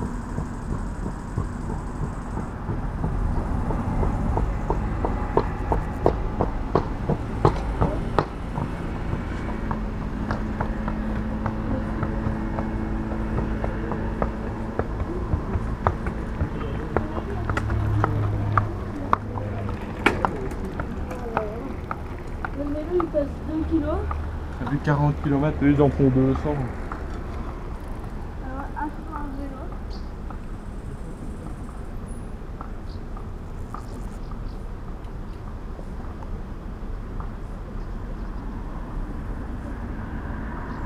Mariembourg, Rue de lAdoption, Abandonned Employment house - le FOREM abandonné
Couvin, Belgium, July 2011